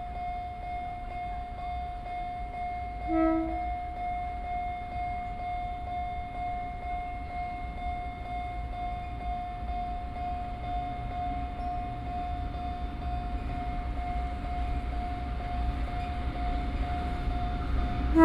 Fuxing Rd., Huwei Township - Transport the sugar cane train
Transport the sugar cane train, The train passes by